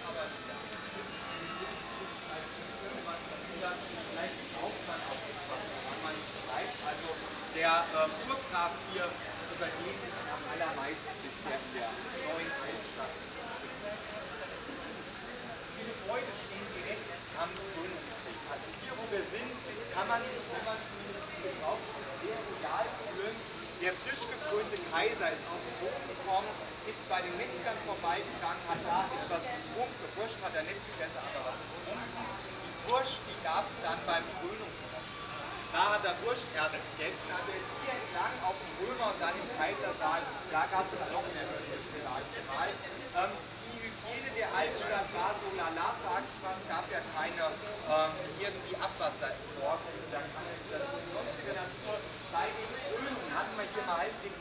Hühnermarkt, Frankfurt am Main, Deutschland - 14th of August 2018 Teil 2
Walk from the fountain at Hühnermarkt, down the 'Königsweg', where German Kaiser used to walk after they became Kaiser - again several chats, spanish among others and a tourist guide - in the background a construction site, finishing the 'old town-project'.